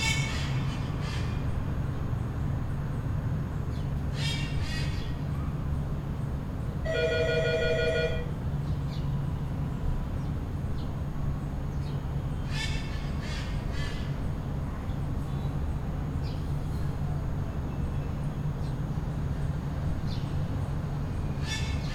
santo domingo, dominican republic, my aunts apartment, out of her window
santo domingo, dominican republic. Aunts apartment. ridiculous bird call, this is the sonic environment of many apartment complexes in santo domingo